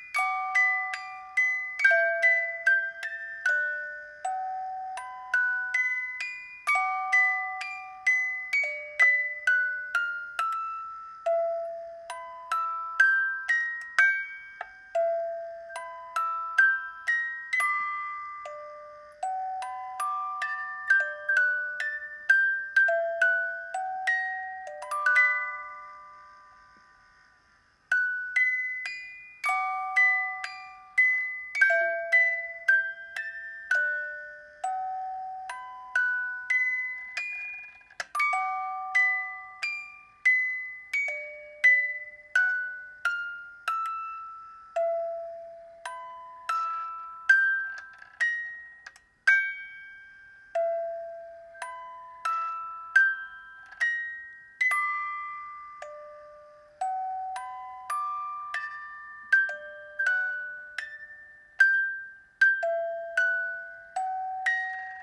Canterbury Rd, Kidderminster, Worcestershire, UK - Memorial
This is the house my family lived in from 1964-2002. I left this house to be married 1-6-1968, 53 years ago today. This little music box stood on a shelf in the lounge. Today I am remembering my mother Dorothy, father Harold and brother John who's birthday this is. Sadly all no longer with us. I am of course celbrating my happy marriage to Olive who is very much alive.
MixPre 3 with 2 x Rode NT5s
West Midlands, England, United Kingdom, June 2020